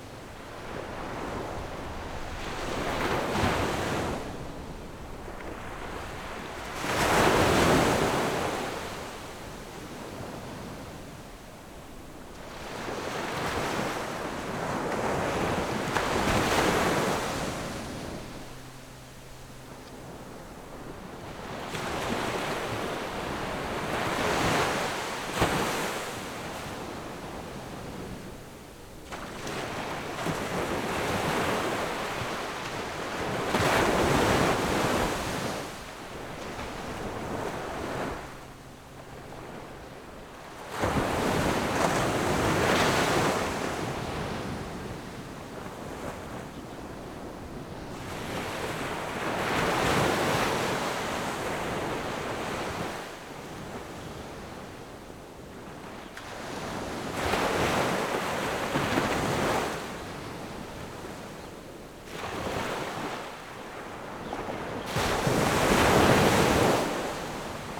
午沙港, Beigan Township - At the beach
Sound wave, In the beach
Zoom H6 +Rode NT4